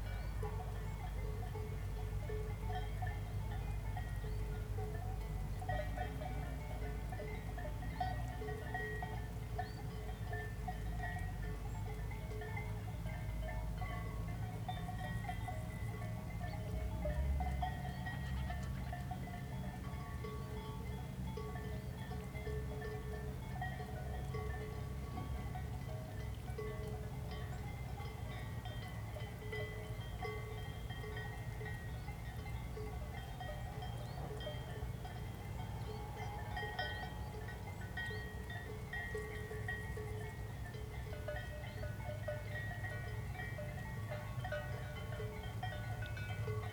Grub, Schweiz - Hohe Höhe - On the ridge, distant cow bells
[Hi-MD-recorder Sony MZ-NH900, Beyerdynamic MCE 82]